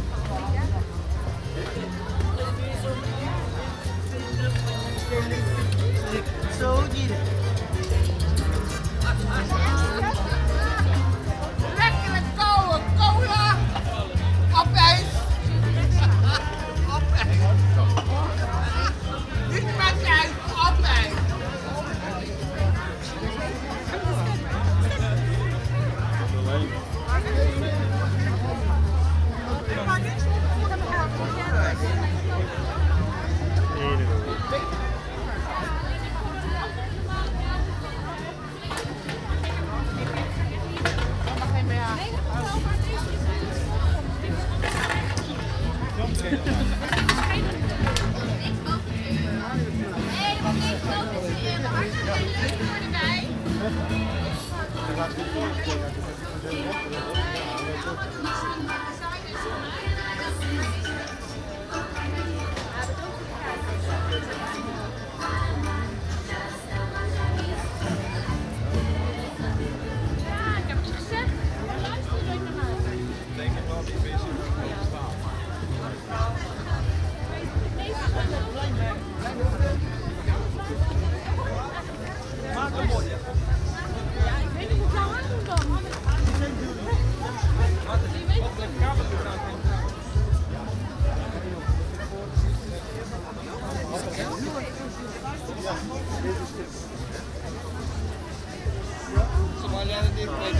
Boekhorststraat - Koningsdag 2015 Boekhorststraat
The annual Dutch celebration of Koningsdag (Kings day) with markets, fair and many different events. Recorded with a Zoom H2 with binaural mics.